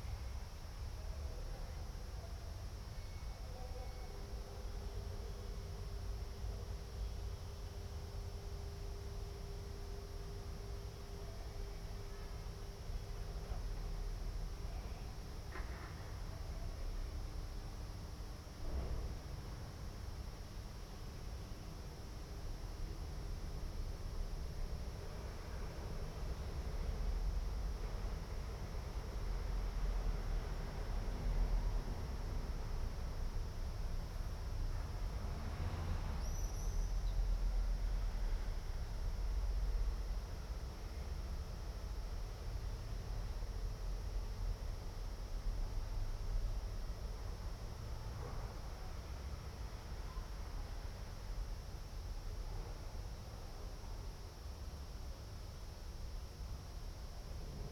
Ascolto il tuo cuore, città, I listen to your heart, city. Several chapters **SCROLL DOWN FOR ALL RECORDINGS** - Terrace August 19th afternoon in the time of COVID19 Soundscape
"Terrace August 19th afternoon in the time of COVID19" Soundscape
Chapter CXXVI of Ascolto il tuo cuore, città. I listen to your heart, city
Wednesday, August 19th, 2020. Fixed position on an internal terrace at San Salvario district Turin five months and nine days after the first soundwalk (March 10th) during the night of closure by the law of all the public places due to the epidemic of COVID19.
Start at 2:35 p.m. end at 3:15 p.m. duration of recording 40'00''
Go to Chapter CXXIV for similar situation.